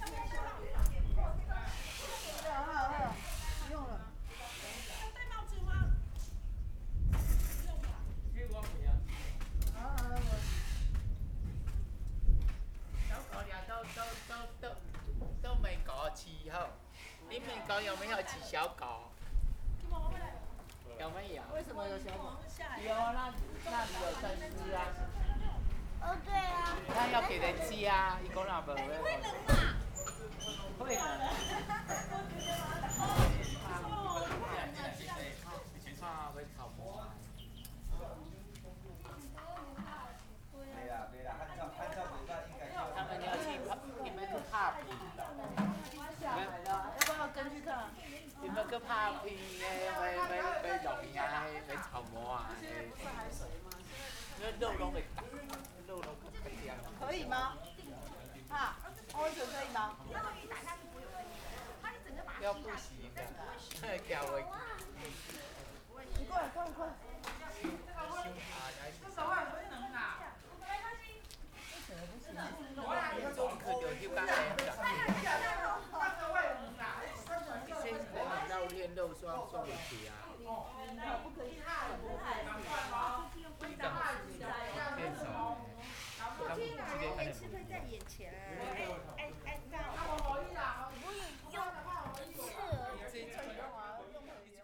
On the streets of hamlet, Dialogue between people who live in a small village, Traffic Sound, Zoom H6
3 January 2014, 14:30